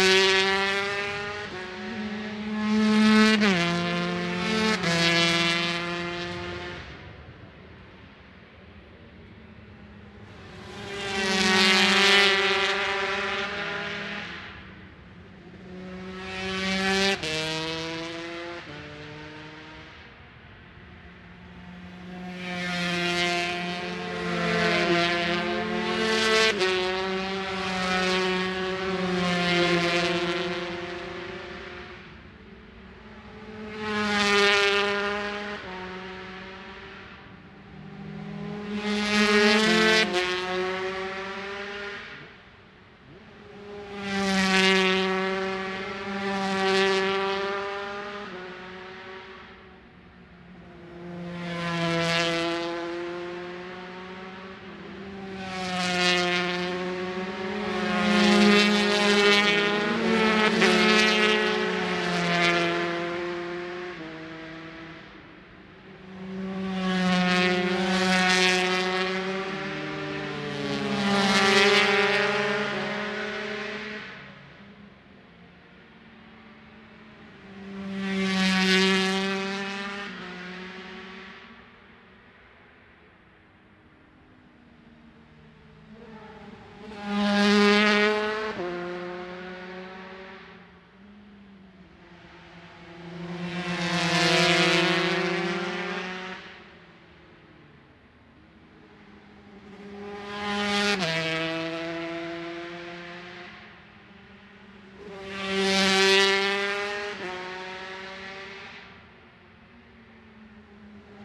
Brands Hatch GP Circuit, West Kingsdown, Longfield, UK - british superbikes 2007 ... 125 practice ...
british superbikes ... 125 practice ... one point stereo mic to minidisk ... time approx ...
7 April 2007, 11:45